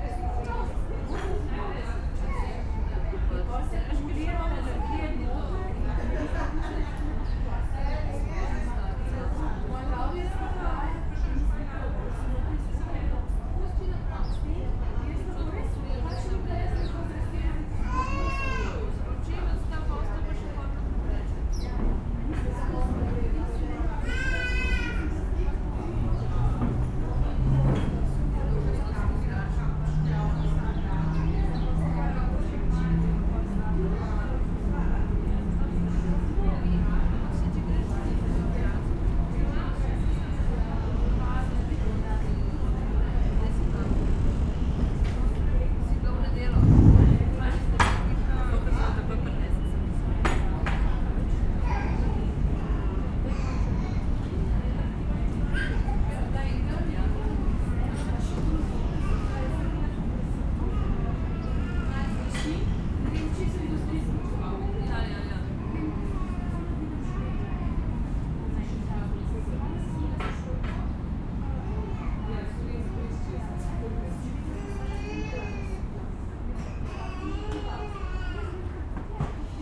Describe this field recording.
cafe at Tivolski ribnik (Tivoli pond), ambience and city sounds, horns of trains, which can be heard all over town. (Sony PCM D50, DPA4060)